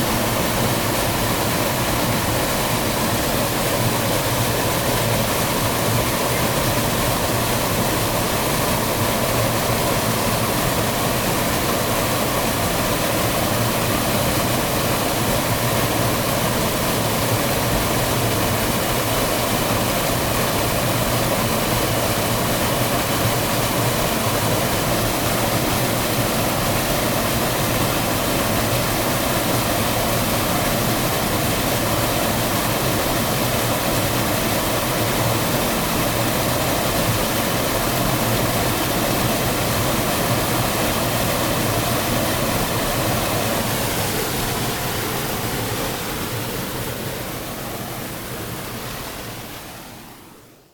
nasino, water fall
summertime, a small waterfall of the pennavaire river here sparkling into a small lake
soundmap international: social ambiences/ listen to the people in & outdoor topographic field recordings